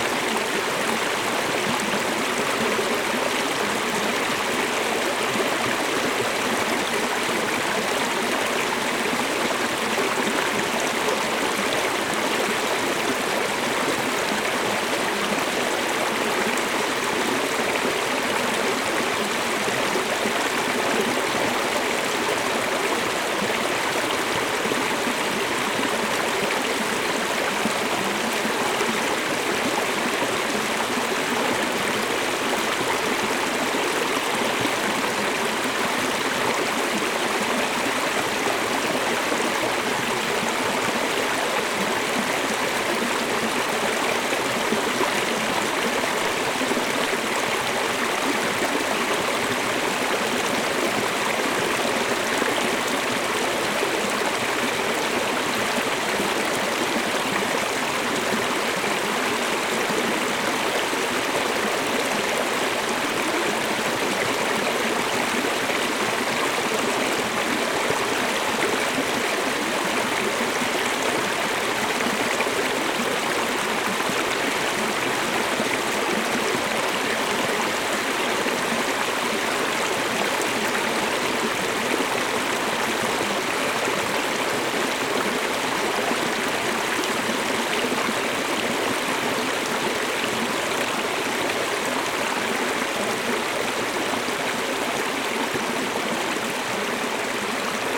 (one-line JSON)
{"title": "Lost Maples State Park, TX, USA - Lost Maples Rocky Rivulet", "date": "2015-10-25 22:00:00", "description": "Recorded with a pair of DPA 4060s into a Marantz PMD661", "latitude": "29.83", "longitude": "-99.59", "altitude": "592", "timezone": "America/Chicago"}